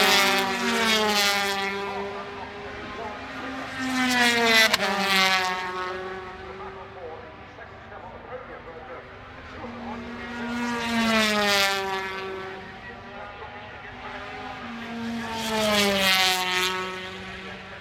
Unnamed Road, Derby, UK - British Motorcycle Grand Prix 2004 ... 125 race ...
British Motorcycle Grand Prix 2004 ... 125 race ... part one ... one point stereo mic to minidisk ...
25 July 2004, 11:00